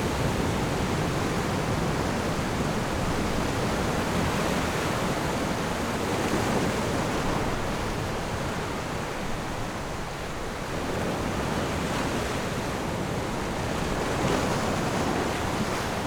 雙獅岩, Jizanmilek - On the coast
On the coast, sound of the waves
Zoom H6 +Rode NT4